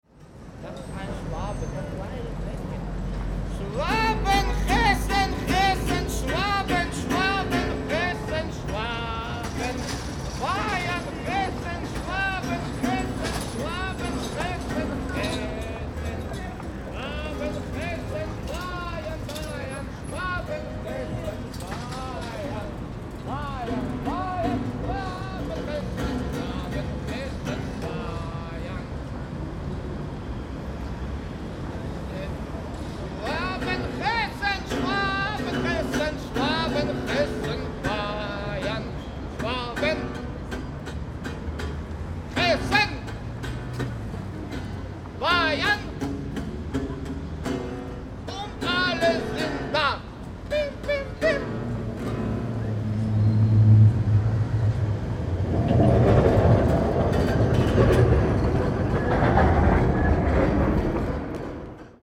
Rosenthaler Platz, Kiosk - crazy singer, by deddy has gone
04.03.2009 19:00
by deddy has gone, no longer johnny cash at this place, now here's a construction site. a crazy guy is singing weird things.
Berlin, Deutschland, 4 March 2009, 19:00